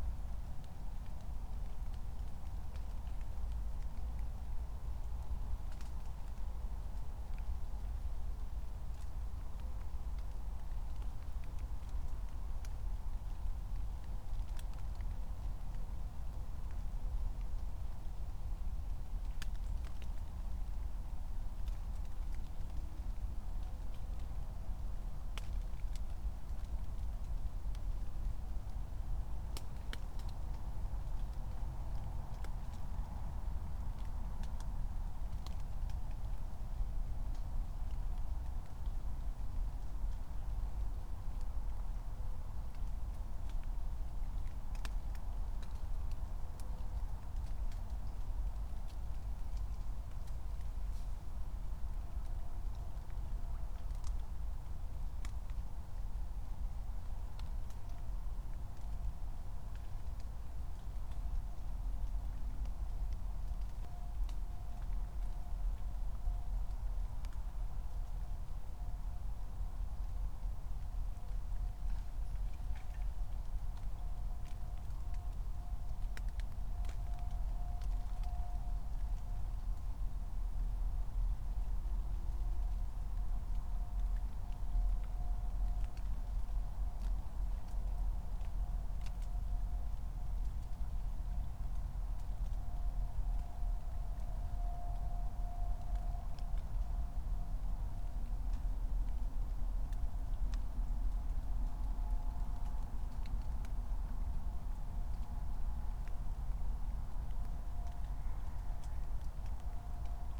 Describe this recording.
Part 1 of a 12h sonic observation at Königsheideteich, a small pond and sanctuary for amphibia. Recordings made with a remote controlled recording unit. Distant city drone (cars, S-Bahn trains etc.) is present more or less all the time in this inner city Berlin forest. Drops of rain, (IQAudio Zero/Raspberry Pi Zero, Primo EM172)